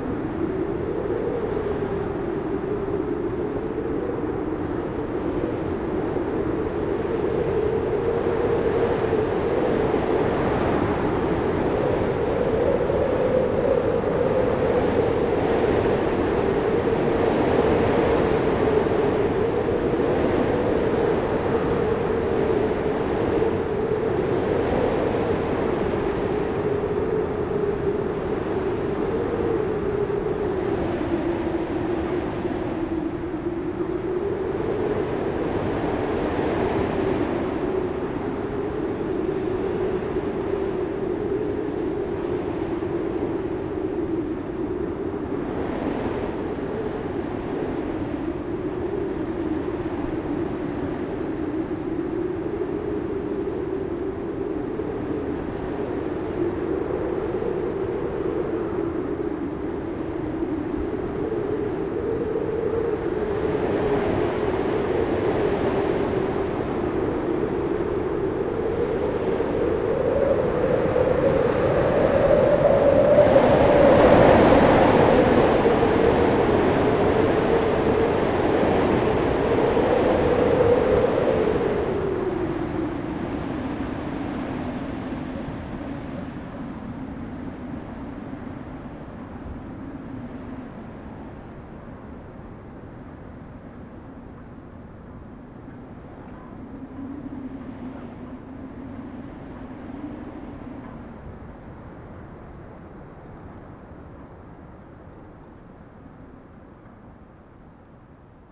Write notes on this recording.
A very strong wind in the electric lines, this makes the strange music of the wind.